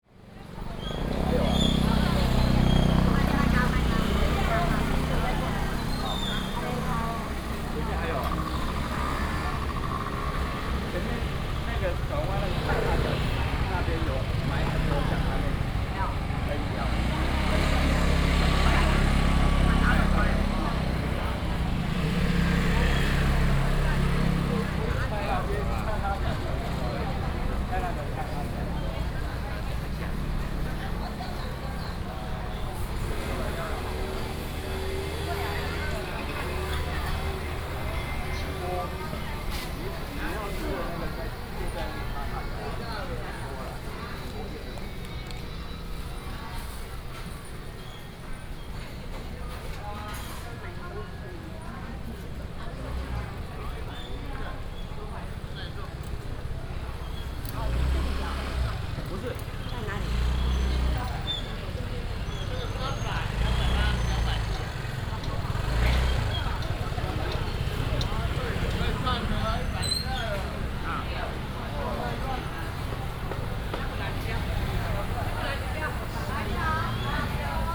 Minsheng Rd., Dahu Township - Walking in the market
Walking in the market, Binaural recordings, Sony PCM D100+ Soundman OKM II